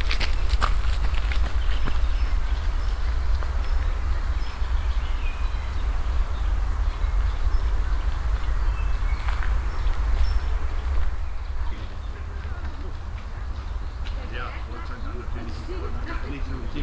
cologne, königsforst, forsbacher str, meeting point for jogger
soundmap nrw: social ambiences/ listen to the people in & outdoor topographic field recordings
Cologne, Germany, 4 July 2009, 12:35